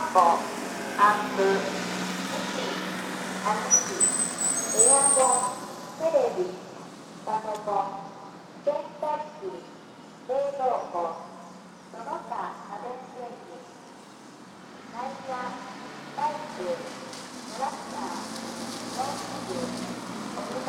Hayashi, Ritto, Shiga, Japan - Field Recording
Recycling collection truck loudspeaker announcing items that the truck will pick up: bicycles, motocycles, etc.
Ritto, Hayashi 県道11号線, 5 May 2014